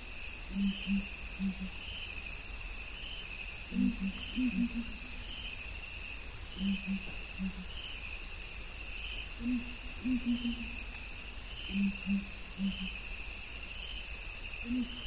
Tsendze Rustic Campsite, Kruger Park, South Africa - Dawn Chorus
First sounds of day. Ground Hornbills, Hippos and much more. EM172's on a Jecklin Disc to SD702
Kruger National Park, South Africa